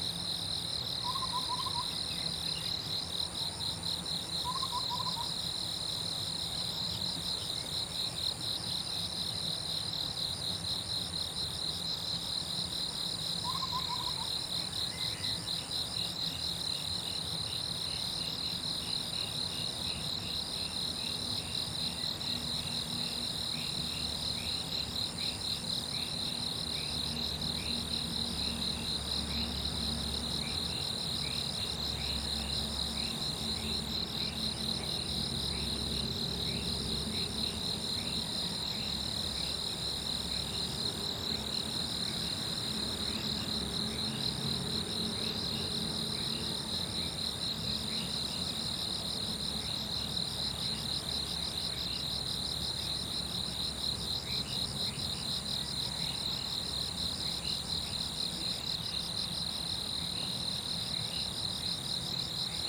水上巷桃米里, Puli Township - In the grass
Early morning, Bird sounds, Insect sounds, In the grass, River Sound
Zoom H2n MS+XY